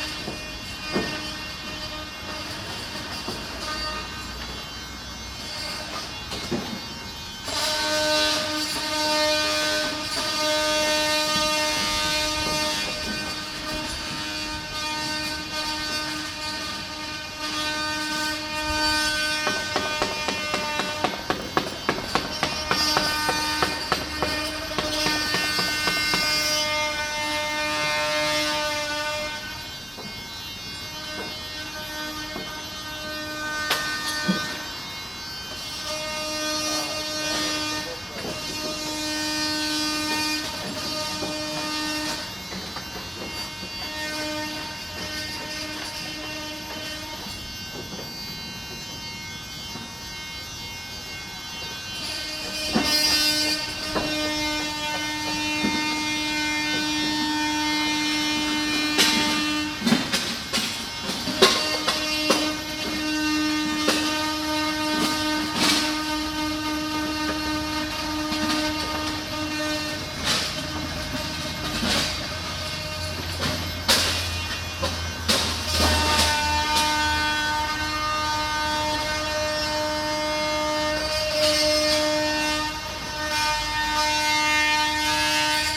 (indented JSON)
{
  "title": "Oosterparkbuurt, Amsterdam, Nederland - Restoration works on a old school.",
  "date": "2013-07-22 12:30:00",
  "description": "Restauratiewerkzaamheden/restoration work 3e H.B.S. Mauritskade (Amsterdam, July 22nd 2013) - binaural recording.",
  "latitude": "52.36",
  "longitude": "4.92",
  "altitude": "4",
  "timezone": "Europe/Amsterdam"
}